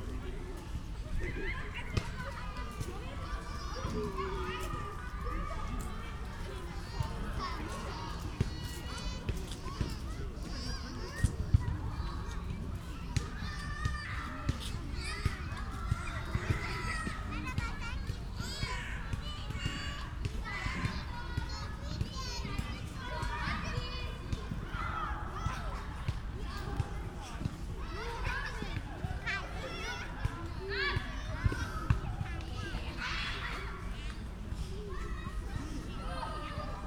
Luisenstädischer Kirchpark, Berlin - playground ambience
Luisenstädischer Kirchpark, Berlin, playground ambience on a autum Sunday afternoon
(Sony PCM D50, DPA4060)
Deutschland, 8 November